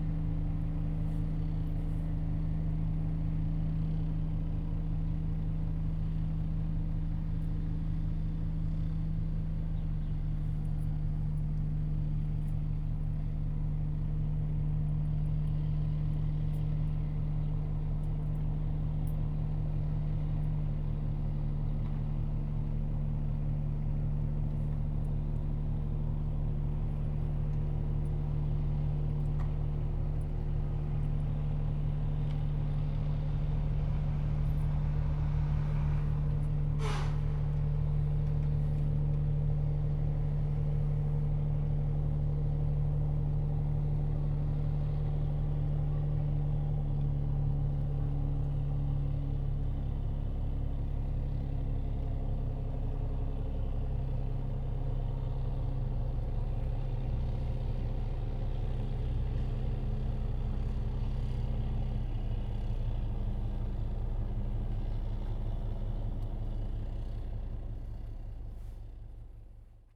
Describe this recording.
In the fishing port, Zoom H2n MS+XY